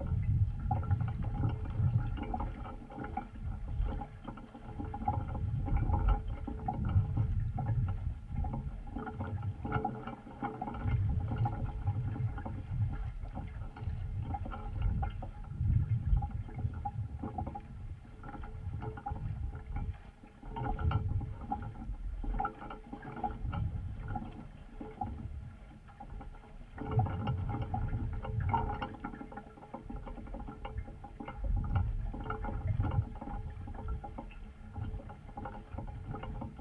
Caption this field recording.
some pipe dipped in water. contact microphones